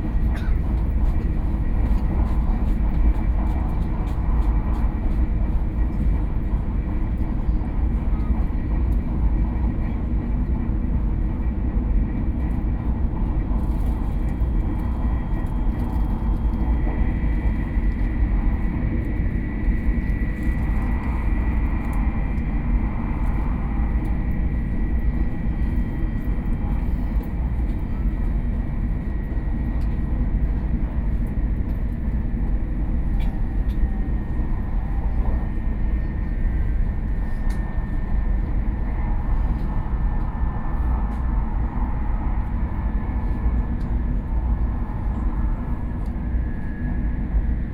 inside the High-speed rail train, Sony PCM D50 + Soundman OKM II
桃園縣 (Taoyuan County), 中華民國, 12 May 2013, 18:54